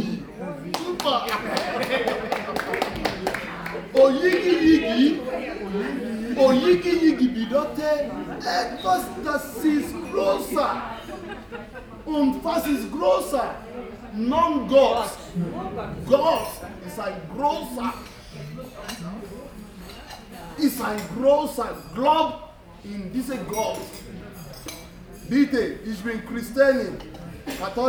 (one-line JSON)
{"title": "Am Kurpark, Hamm, Germany - Yemi Ojo performs O Yigi Yigi...", "date": "2011-11-11 20:17:00", "description": "We are with many people set for a celebratory dinner in a large hall. It’s the end-of-year thank-you-dinner for all the honorary helpers of the “Humanitas” project & shop. The Nigerian artist and musician Yemi Ojo and his son Leon set out to entertain the guests with some Yoruba music. Yemi explains that the song they are performing here is singing praises to God Almighty “O Yigi Yigi”, a Yoruba version of “Grosser God wir loben dich”, if you want… Yemi and the beat of the Yoruba praise song gets the listeners involved, dran in and finally, on their feet…\nLinks:", "latitude": "51.69", "longitude": "7.84", "altitude": "67", "timezone": "Europe/Berlin"}